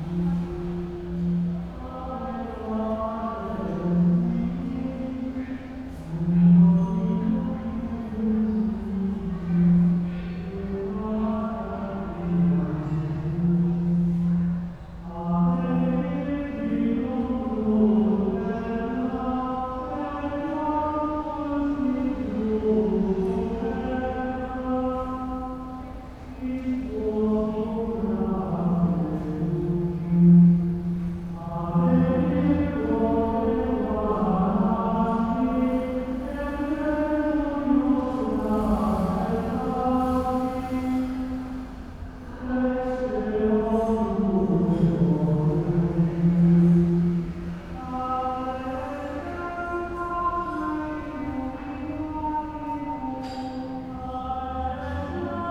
crkva gospe od karmela, novigrad, croatia - church sings at night
church with open doors at night, out and inside merge ...